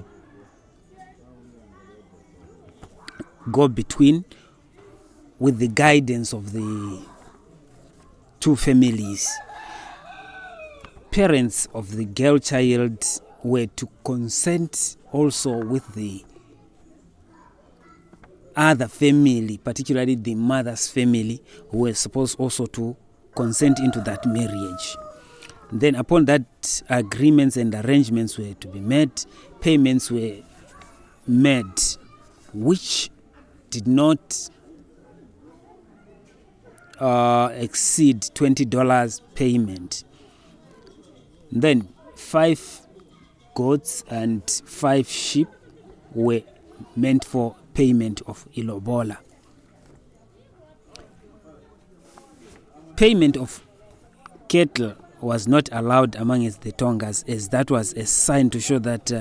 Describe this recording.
Mr Mwinde himself provides an English summary of the interview. recordings from the radio project "Women documenting women stories" with Zubo Trust. Zubo Trust is a women’s organization in Binga Zimbabwe bringing women together for self-empowerment.